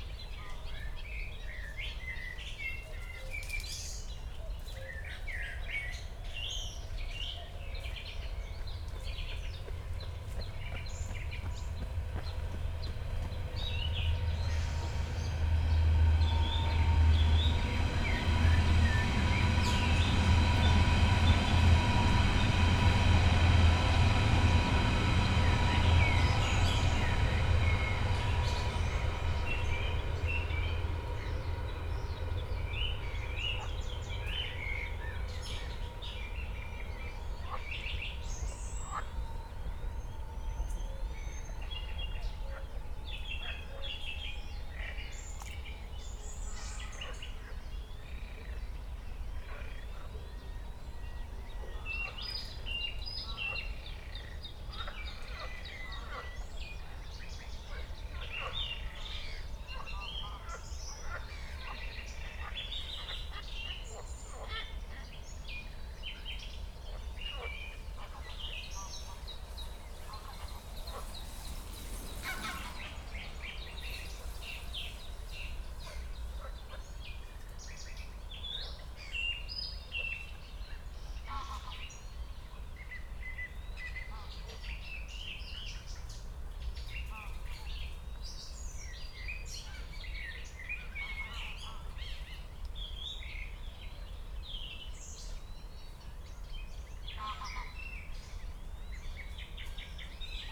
Moorlinse, Buch, Berlin - spring evening ambience /w S-Bahn
Moorlinse pond, late spring evening ambience, S-Bahn trains passing by very near
(Sony PCM D50, DPA4060)
Berlin, Germany, June 2020